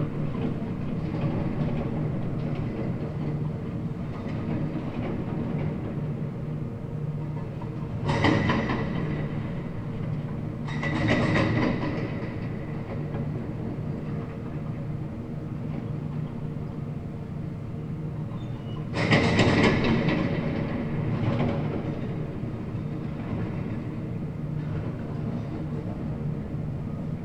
ein bagger beim planieren auf einer baustelle, an excavator levelling ground on a construction site